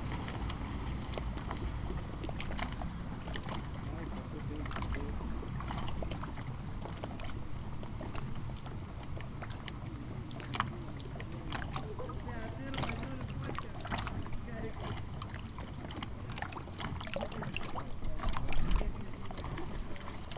Palūšė, Lithuania, a soundscape
on the footbridge